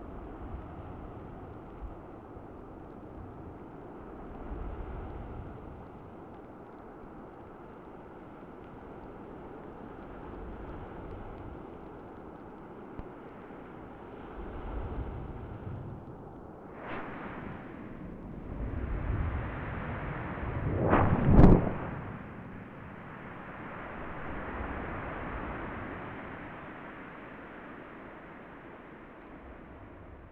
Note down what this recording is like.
two hydrophones hidden on seashore's sand